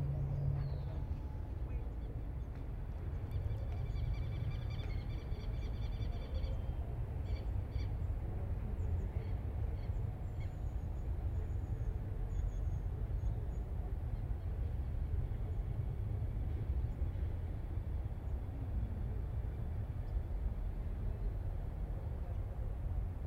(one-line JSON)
{"title": "Cl., Bogotá, Colombia - Los Monjes Park", "date": "2021-05-27 17:30:00", "description": "In this ambience you can hear a neighborhood park in an afternoon in Bogotá, there are a lot of birds chirping, you can hear a someone walking with a wheeled suitcase through asphalt, people talking, dogs barking, and since it is near an avenue you can hear car engines and car horns.", "latitude": "4.68", "longitude": "-74.11", "altitude": "2553", "timezone": "America/Bogota"}